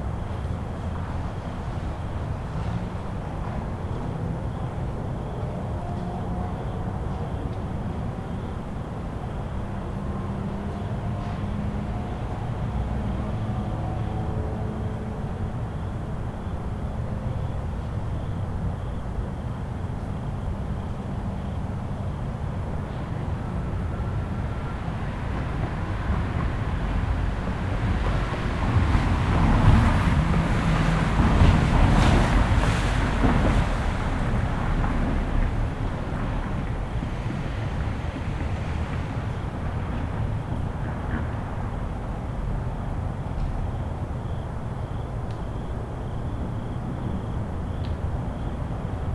Bolton Hill, Baltimore, MD, USA - Park at night
Recorded using onboard zoom H4n microphones. Some crickets and traffic sounds.
23 October, 21:15